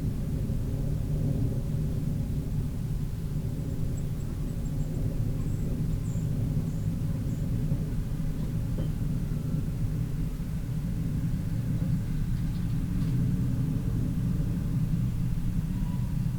aubignan, grape plantage
In the early morning time. The silent, hightone atmosphere of the fields filled with insects and birds. A plane passing by in the far distance and the sound of the cutting of grape vines with a scissor.
international sonic ambiences and scapes